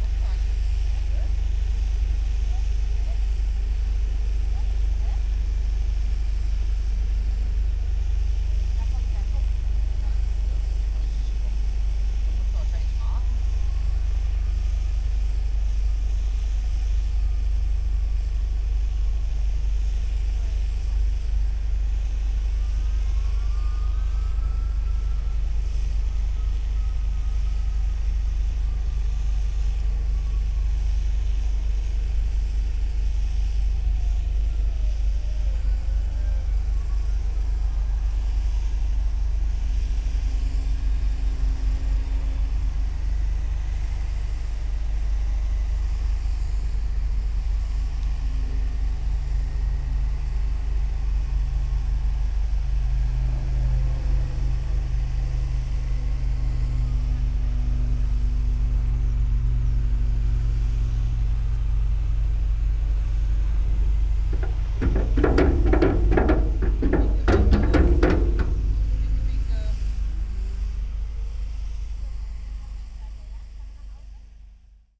Dieselstr., Neukölln, Berlin - A100 construction works
excavator drone, construction works for the A100 Autobahn
(SD702, DPA4060)
Berlin, Germany, June 2015